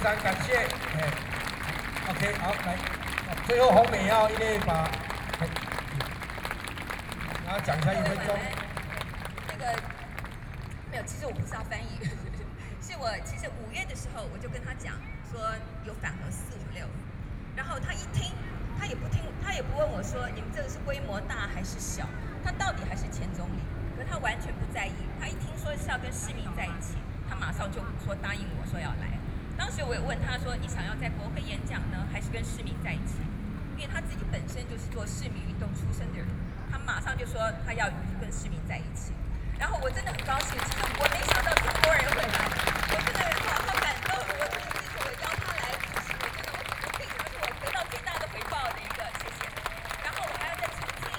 Liberty Square, Taipei - Speech - anti-nuclear
Former Prime Minister of Japan （Mr. Naoto Kan かん なおと）, Speech on anti-nuclear stance and the Japanese experience of the Fukushima Daiichi nuclear disaster, Sony PCM D50 + Soundman OKM II
Taipei City, Taiwan